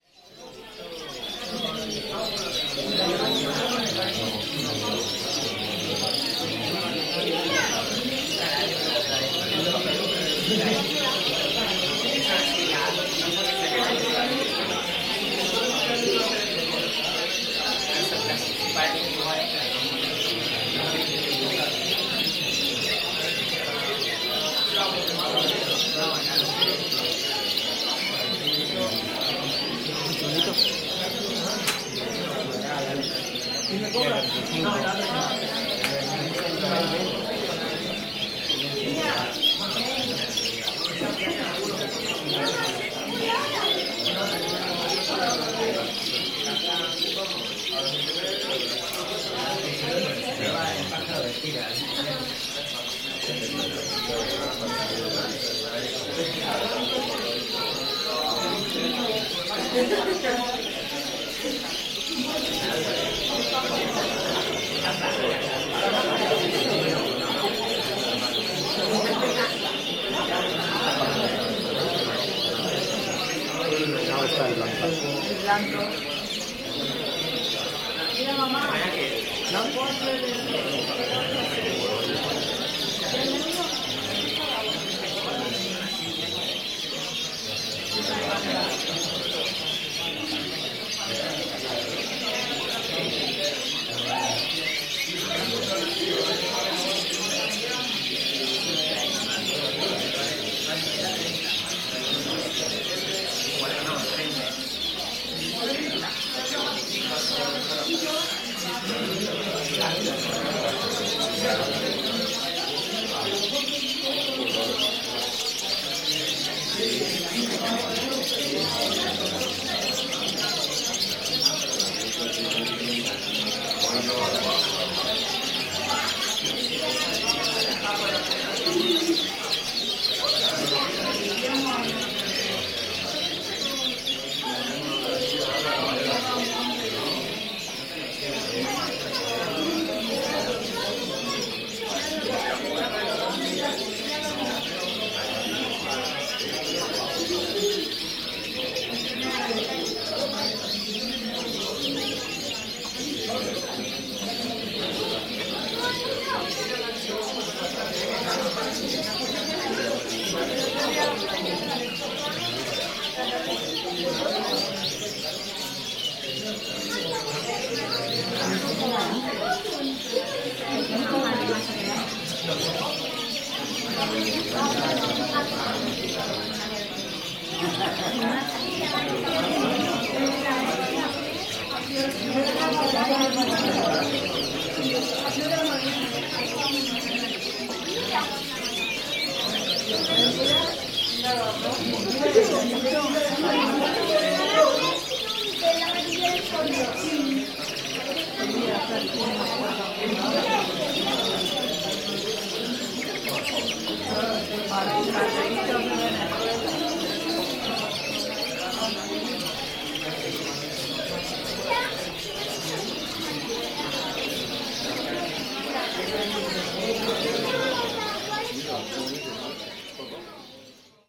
pet shop birds in Madrid Spain
recording made in a pet shop of birds in Madrid Spain